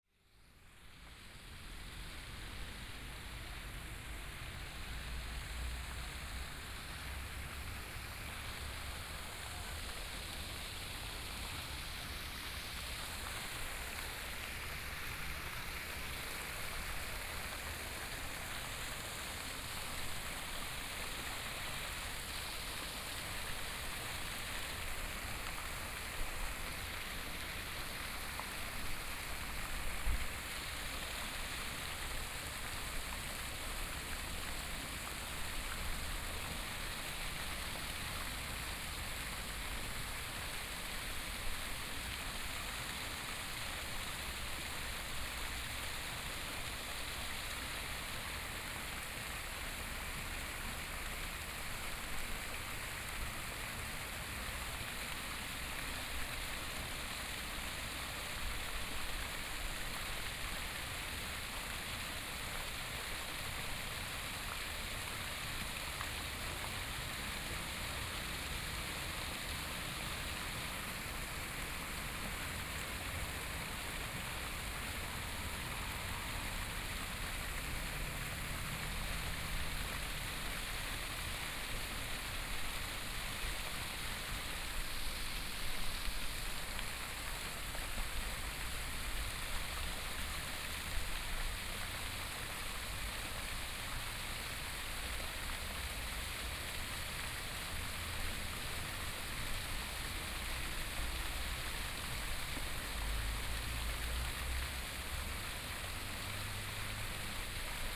花蓮市民治里, Taiwan - Fountain
Fountain, Traffic Sound
Binaural recordings
Zoom H4n+ Soundman OKM II
24 February 2014, 14:57